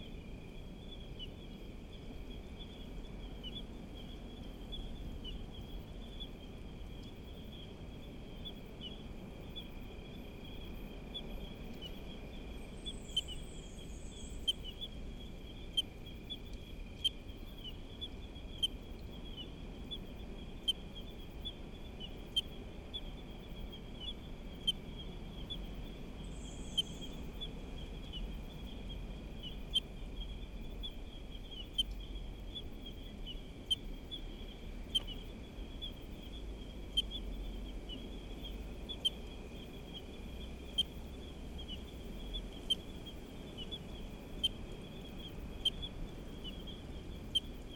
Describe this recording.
Night cicadas and other creatures of the night, trees cracking on wind and the ocean nearby. Recorded with a SD mixpre6 and a pair of primos 172 in AB stereo configuration.